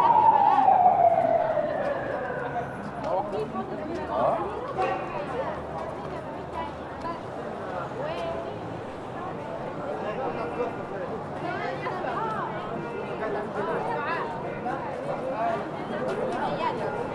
Tahrir Square, Al-Qahira, Ägypten - Tahrir Square
The recording was made in the evening hours (about 9.30pm) on april 30th using a Zoom H4N. Passersby, a kid playing on a toy pan flute, an ambulance passing. In opposite is the tent camp of the democracy movement.
Cairo, Egypt, 8 May 2012